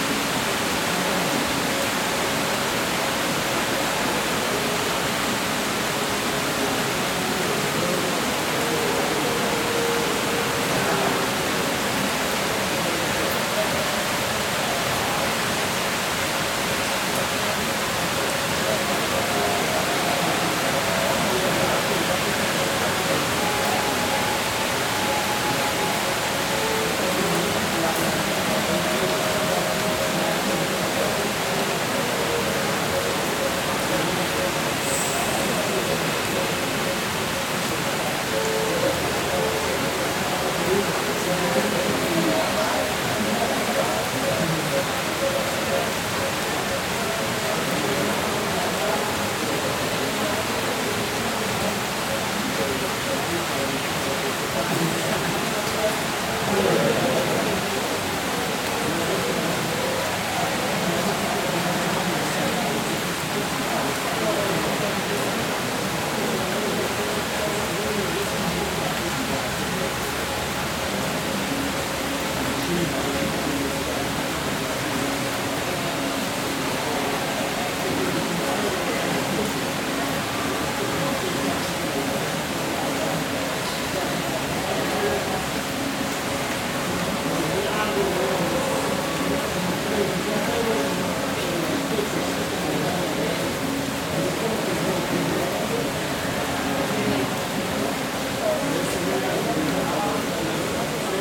Portería del Rio, Medellín, Aranjuez, Medellín, Antioquia, Colombia - De Fiesta En La Lluvia
Una tarde en el bloque de artes de la universidad de Antioquia mientras llueve y todos los estudiantes conversan pasando el tiempo